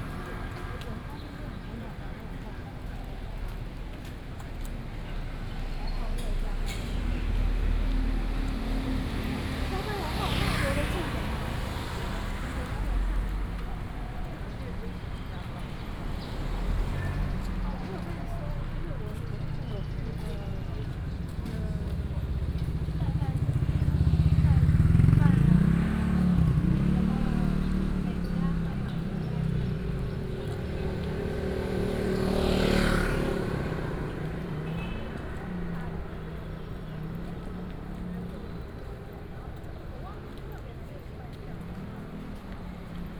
{"title": "Tong’an St., Da’an Dist., Taipei City - walking in the Street", "date": "2015-07-30 18:00:00", "description": "walking in the Street, Traffic Sound, Bird calls", "latitude": "25.03", "longitude": "121.55", "altitude": "17", "timezone": "Asia/Taipei"}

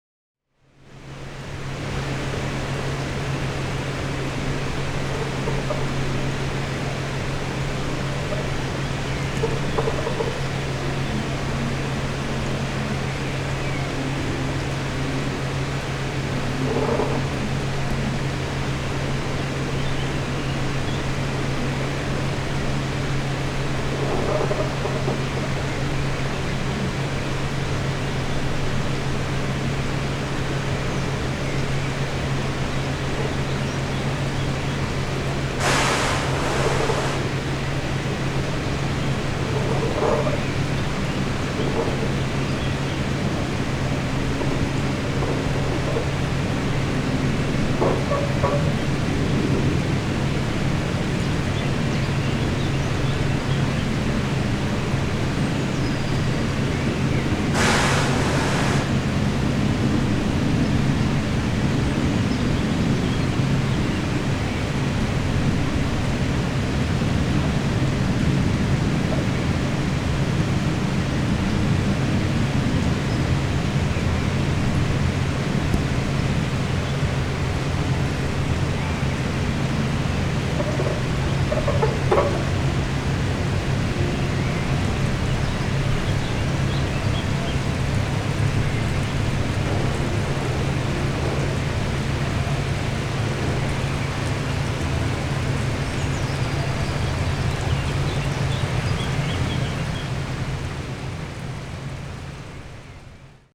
{"title": "Walking Holme Squeeky", "date": "2011-04-20 11:16:00", "description": "A squeeky old fan. Walking Holme", "latitude": "53.56", "longitude": "-1.80", "altitude": "167", "timezone": "Europe/London"}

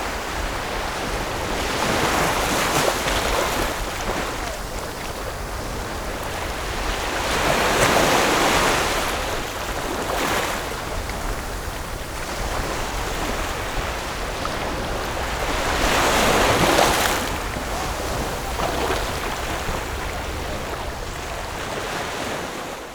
{"title": "Sanzhi, New Taipei City - The sound of the waves", "date": "2012-06-25 11:03:00", "latitude": "25.25", "longitude": "121.47", "altitude": "1", "timezone": "Asia/Taipei"}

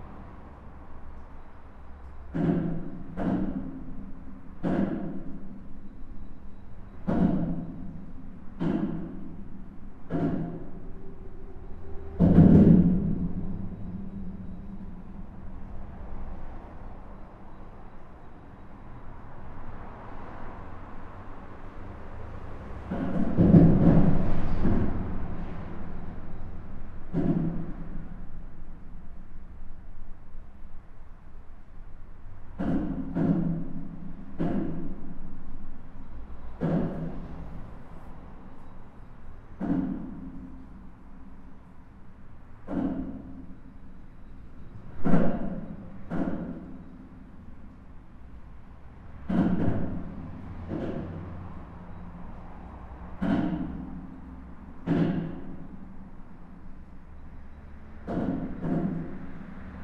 {"title": "Genappe, Belgique - Inside the bridge", "date": "2016-04-11 12:45:00", "description": "Inside an highway bridge. This is the sound of the expansion joint. I'm just below and cars are driving fast.", "latitude": "50.62", "longitude": "4.53", "altitude": "84", "timezone": "Europe/Brussels"}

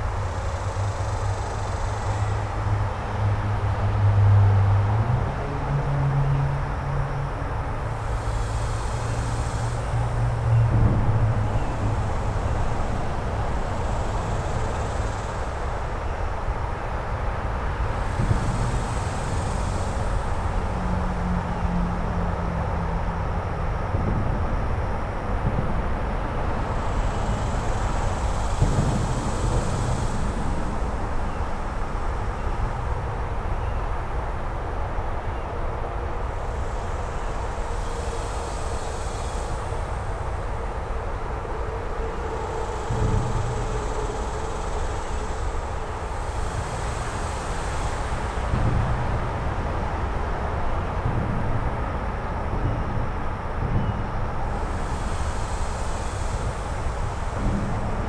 {"title": "N Mopac Expy, Austin, TX, USA - Beneath the northbound 183 flyover", "date": "2020-07-18 10:32:00", "description": "Recorded with an Olympus LS-P4 and a pair of LOM Usis hung from tree branches. This is a space that is mostly inaccessible. The voices of the cicadas are very strong; they are drowned out by the overwhelming thrum of traffic but are in a different frequency range so still audible.", "latitude": "30.38", "longitude": "-97.74", "altitude": "236", "timezone": "America/Chicago"}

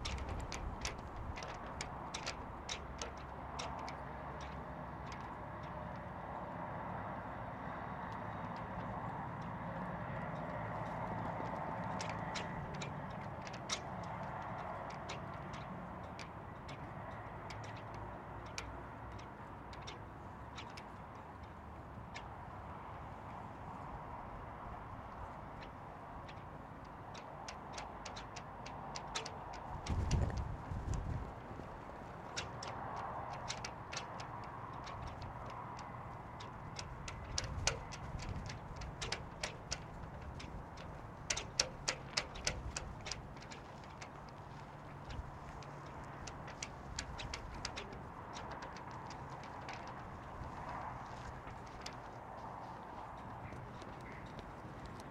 {"title": "Rokiškis, Lithuania, flags in wind", "date": "2020-02-17 18:25:00", "description": "flag poles in the wind", "latitude": "55.95", "longitude": "25.58", "altitude": "128", "timezone": "Europe/Vilnius"}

